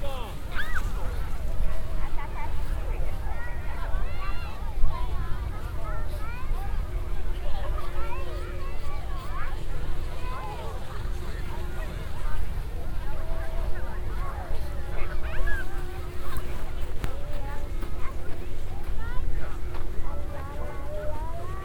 Düsseldorf, am Schürberg, Schlittenbahn - düsseldorf, am schürberg, schlittenbahn
Auf der schlittenbahn, vorbeifahrende Rodler und den Hang wieder hinauf ziehende Kinder und Erwachsene
soundmap nrw - topographic field recordings, listen to the people